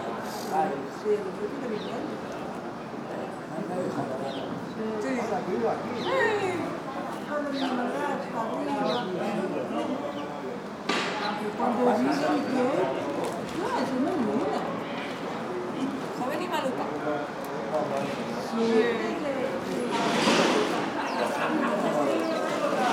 Puig de Sant Pere, Palma, Illes Balears, Spain - Cafe Arenas, Placa de La Drassana, Palma Mallorca.
Cafe Arenas, Placa de La Drassana, Palma Mallorca. Sont M10, built in mics.